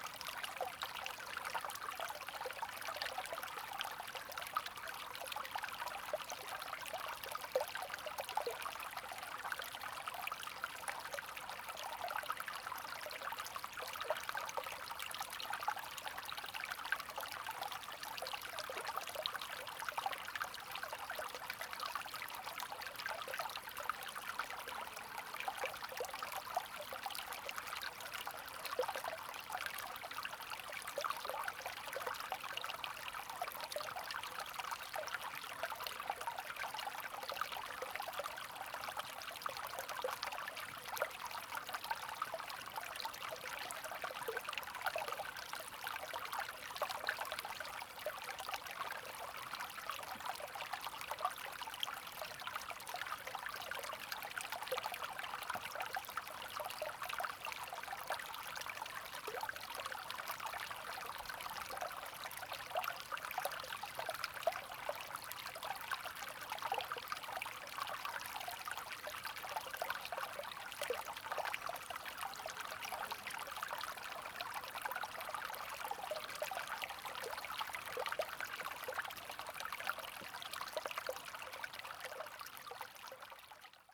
乾溪, 埔里鎮成功里 - River scarce flow
Stream, River scarce flow
Zoom H2n MS+XY
Puli Township, Nantou County, Taiwan, 26 April 2016